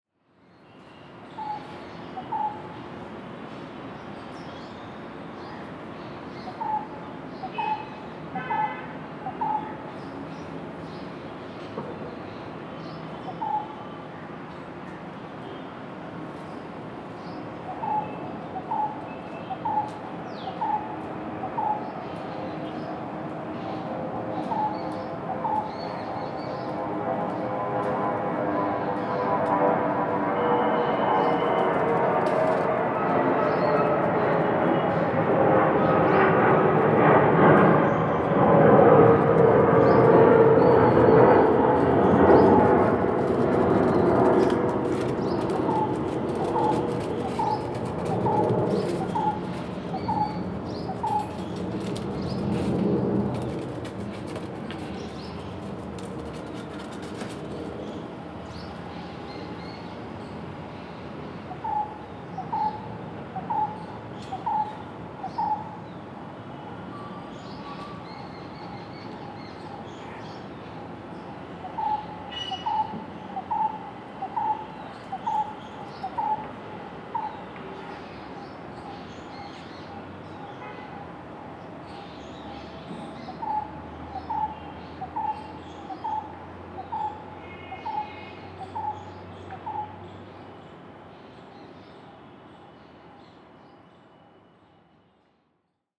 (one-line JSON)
{"title": "West End Colony, Block A, Moti Bagh, New Delhi, Delhi, India - 07 West End", "date": "2016-01-26 17:56:00", "description": "The calm ambiance of a West End Colony with planes flying above it.\nZoom H2n + Soundman OKM", "latitude": "28.57", "longitude": "77.16", "altitude": "246", "timezone": "Asia/Kolkata"}